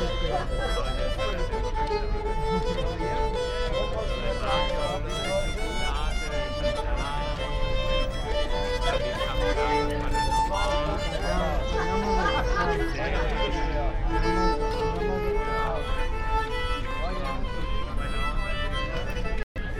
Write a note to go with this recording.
Berlin Maybachufer, weekly market, busker, ambience. field radio - an ongoing experiment and exploration of affective geographies and new practices in sound art and radio. (Tascam iXJ2 / iPhoneSE, Primo EM172)